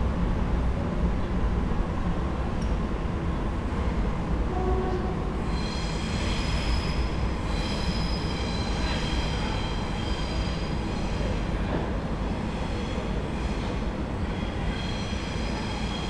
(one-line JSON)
{"title": "neoscenes: corner of George Street", "date": "2009-11-10 14:13:00", "latitude": "-33.86", "longitude": "151.21", "altitude": "40", "timezone": "Australia/NSW"}